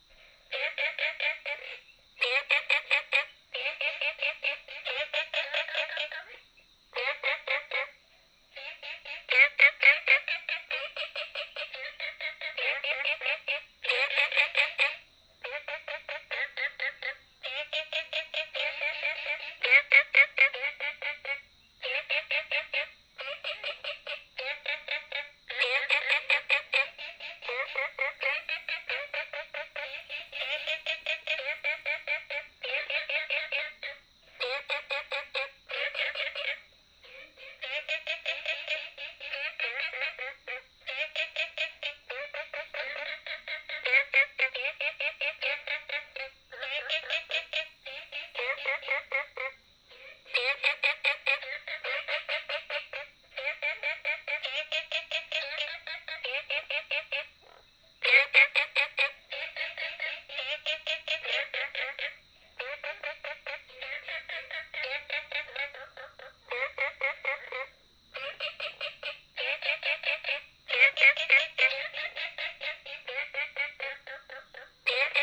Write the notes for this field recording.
Frogs sound, Binaural recordings, Sony PCM D100+ Soundman OKM II